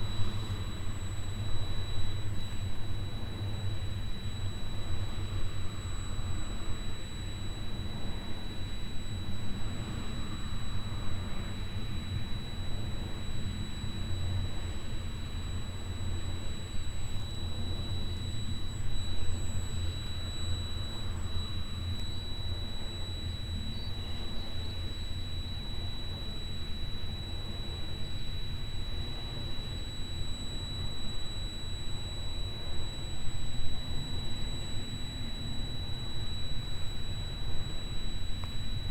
heiderscheid, wind power plant
Standing by a wind mill on a windy summer morning. The steady sound of a inside generator and the regular movement sound of the mills wings. In the distance the traffic of the nearby street.
Heiderscheid, Windkraftwerk
Neben einer Windmühle an einem windigen des im Turm befindlichen Generators und das Geräusch der regelmäßigen Bewegung der MWindrotoren. In der Ferne der Verkehr auf der Straße.
Heiderscheid, éolienne
Debout au pied du mât de l’éolienne. Un avion à moteur traverse le ciel, des oiseaux gazouillent et le bruit du mouvement des pales de l’éolienne.
Project - Klangraum Our - topographic field recordings, sound objects and social ambiences